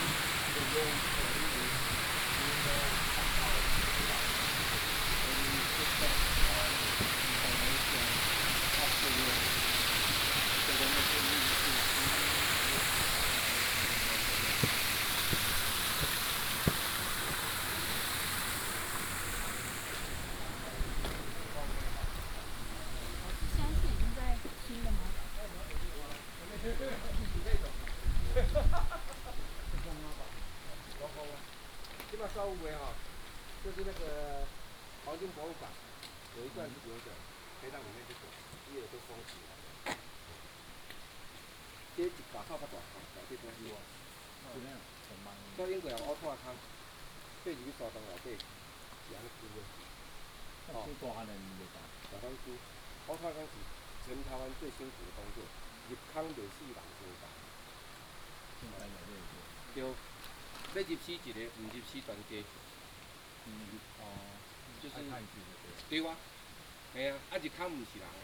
樹梅坪古道, 新北市瑞芳區 - Entrance to the ancient trail
Entrance to the ancient trail
Sonu PCM D100 XY
Ruifang District, New Taipei City, Taiwan, November 2018